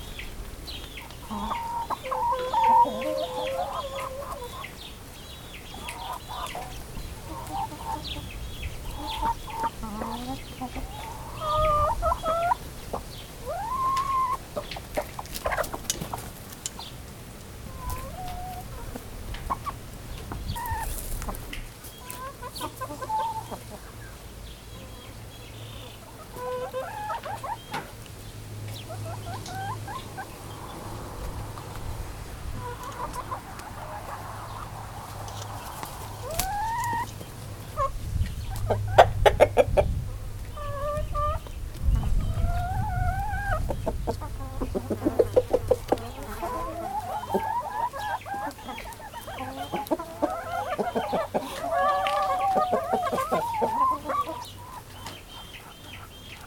Evening recording of Chickens inside a Chicken Pen at Falatados village made by the soundscape team of E.K.P.A. university for KINONO Tinos Art Gathering.
Tinos, Greece, July 2018